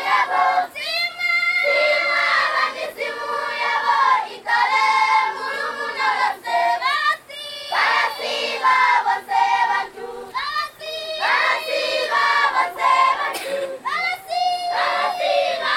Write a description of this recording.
…all get up for the anthems… first the Zimbabwe national anthem… then, what I gather, might be a special anthem of the BaTonga… …we are witnessing an award ceremony at Damba Primary School, a village in the bushland near Manjolo… the village and guest are gathered under the largest tree in the school ground…